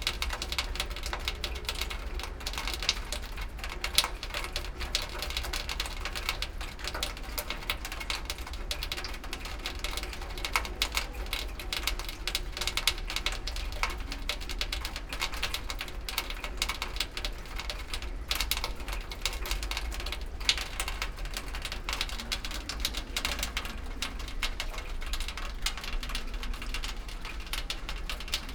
Muzej norosti, Museum des Wahnsinns, courtyard, Trate, Slovenia - rain on horizontal part of a strange chimney